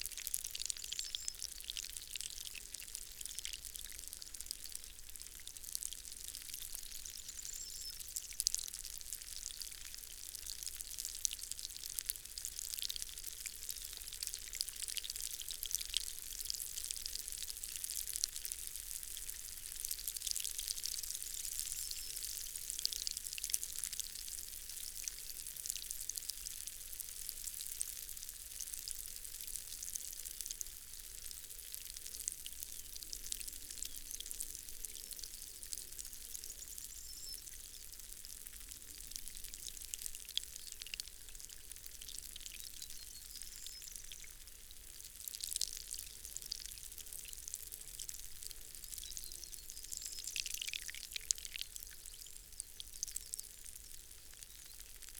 Green Ln, Malton, UK - rivulet down a country road ...
rivulet down a country road ... an irrigation system hooked up to a bore hole had blown a connection ... this sent a stream of water down the track and pathways ... the stream moved small pebbles and debris down the side of the road ... recorded with dpa 4060s in a parabolic to mixpre3 ... bird calls ... song ... blackbird ... skylark ... yellowhammer ... wren ... corn bunting ... linnet ...
England, United Kingdom